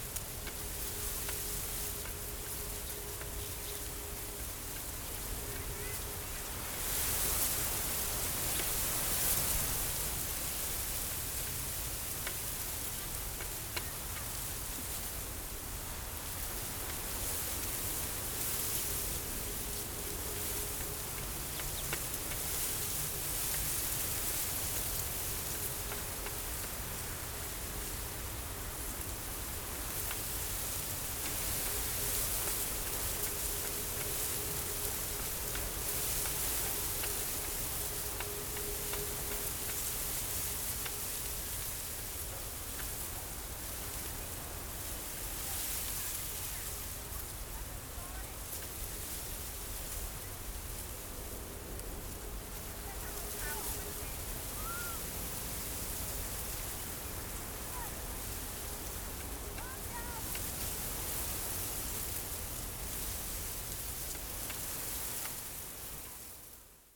Fangyuan Township, Changhua County - The sound of the wind
The sound of the wind, Zoom H6
Changhua County, Taiwan, 4 January 2014, 14:45